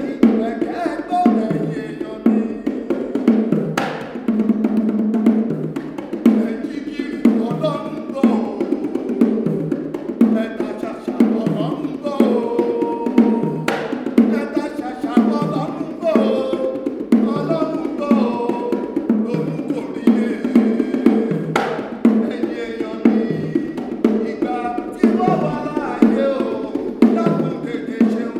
Helios Theatre, Hamm, Germany - Yemi Ojo everything positive...
Yemi continues performing a song in Yoruba…
10 December 2011